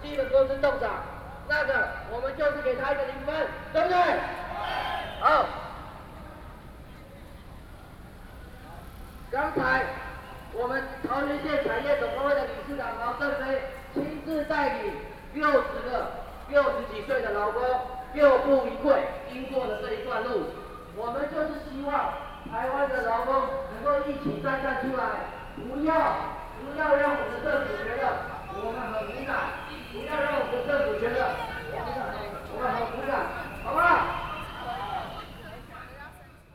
Gongyuan Rd., Zhongzheng Dist., Taipei City - Labor protest